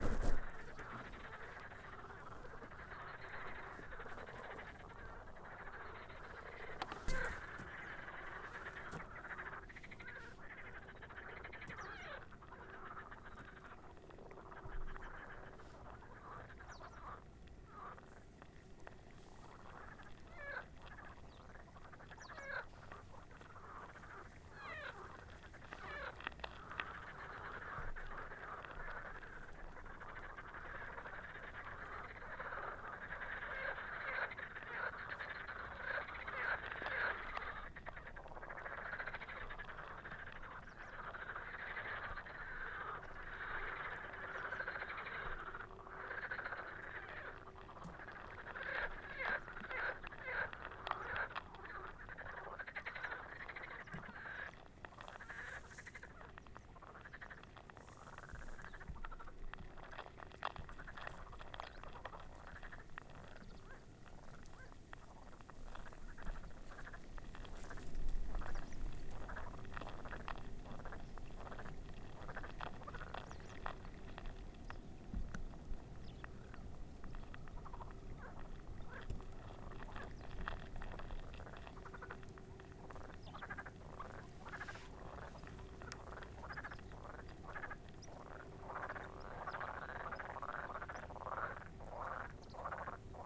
Unnamed Road, Czechia - Frogs of the sedimentation pond of Počerady Power station
Recording of frogs chorus around noon-with the Zoom H6, simultaneously above and underwater (hydrophone). It was first time I have heard frogs in this industrial lake.